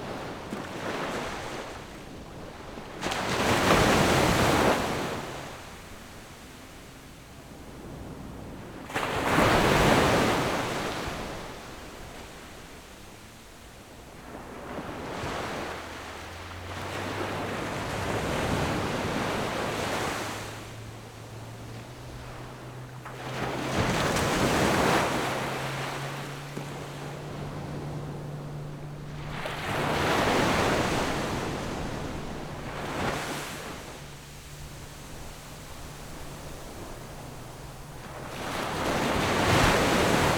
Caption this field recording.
Sound wave, In the beach, Zoom H6 +Rode NT4